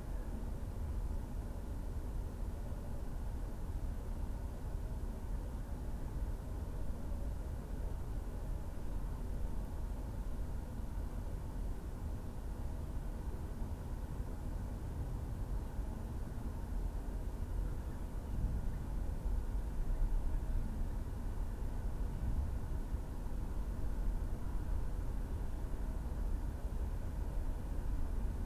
Hiddenseer Str., Berlin, Germany - The Hinterhof from my 3rd floor window. Monday, 3 days after Covid-19 restrictions
The Hinterhof is eerily quiet. It's as if many of those living here don't exist. Maybe they've gone away. I'm not hearing children any more. This tone is often present and sounds no different from always (it is an accidental wind flute from one of the external pipes from the heating system) but it's detail is more apparent with less urban rumble. There's a slightly harmonic hiss associated with it hasn't been clear till now. Also the city's sub bass is more audible. Interesting which sounds are revealed when normal acoustic backdrop changes. There also more sirens, presumably ambulances.
Deutschland, 23 March 2020, 10:24